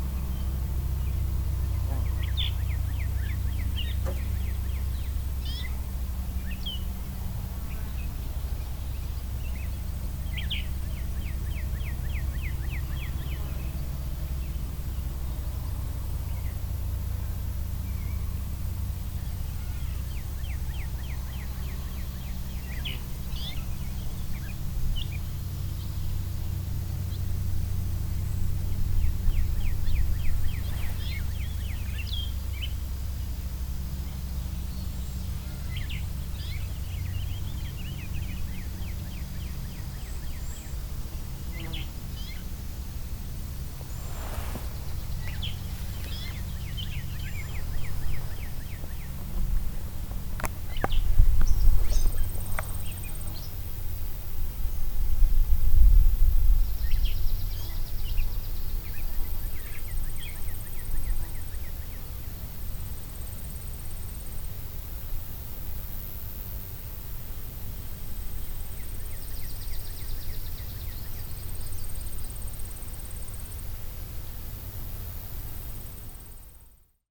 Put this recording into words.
Sitting on a bench on the, "red" nature trail...an airplane joins the animal sounds...